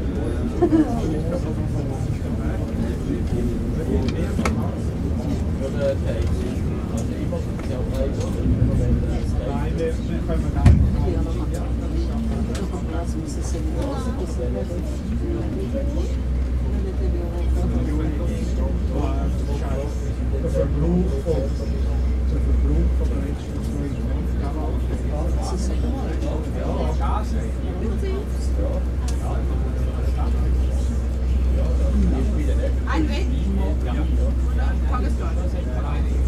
zürich 8 - zvv-fähre, abfahrt zürichhorn
zvv-fähre, abfahrt zürichhorn